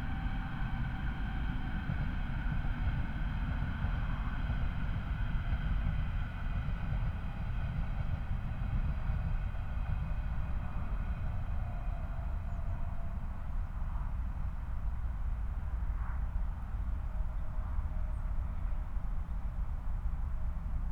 Moorlinse, Berlin Buch - near the pond, ambience
10:19 Moorlinse, Berlin Buch
Deutschland, 23 December